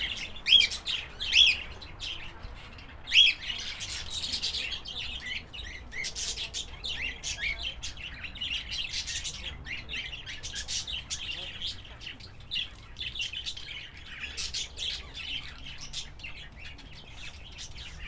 Poznan, old zoo, Australian parrots
Poznan, Poland, 4 September 2010